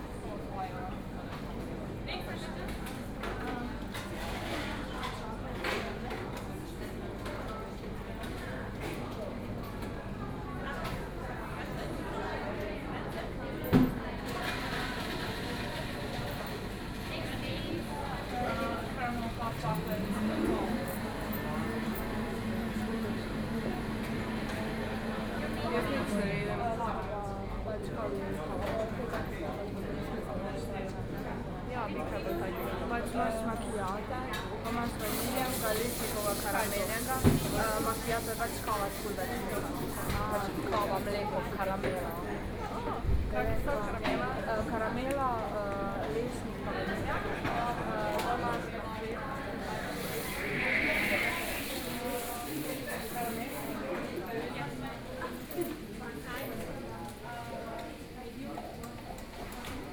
Altstadt, Munich 德國 - Inside the coffee shop
Inside the coffee shop, Starbucks